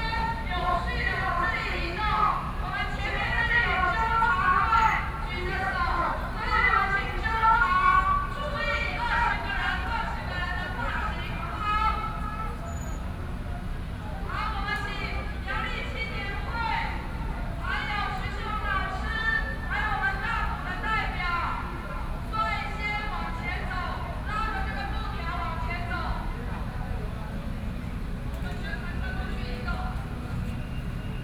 Protest marchers prepared to move to other government departments, Sony PCM D50 + Soundman OKM II